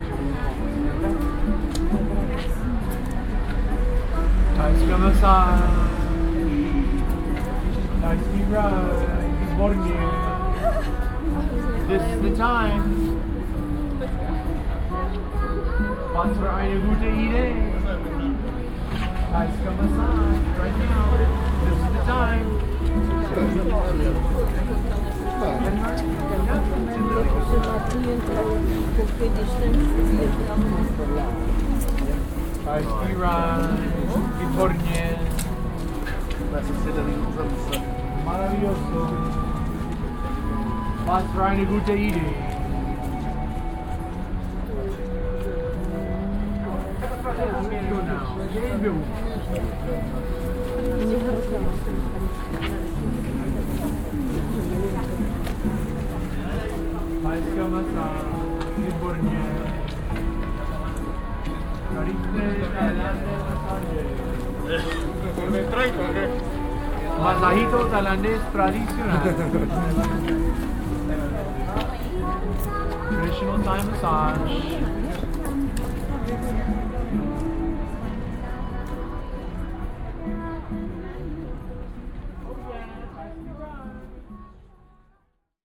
Václavské náměstí, Prag, Tschechische Republik - Václavské náměstí, Praha - thajská masáž promotion
Václavské náměstí, Praha - thajská masáž promotion. Some guy in a Santa Claus costume promoting for "thajská masáž" in several different languages. [I used Olympus LS-11 with external binaural microphones Soundman OKM II AVPOP A3]
Prague, Czech Republic, 3 December 2010